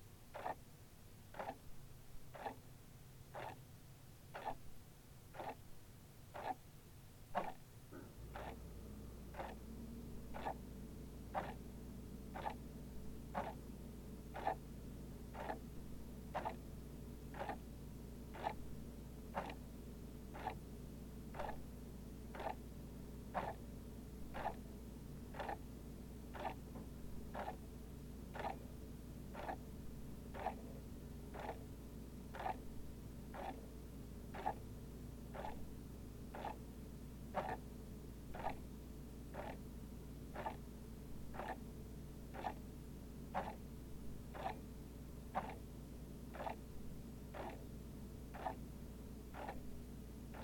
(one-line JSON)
{"title": "Westmount Place, Calgary, AB, Canada - Two Clocks Slightly Out of Sync", "date": "2015-12-02 23:27:00", "description": "Two clocks that are slightly out of sync placed side-by-side in my friend's apartment", "latitude": "51.05", "longitude": "-114.09", "altitude": "1054", "timezone": "America/Edmonton"}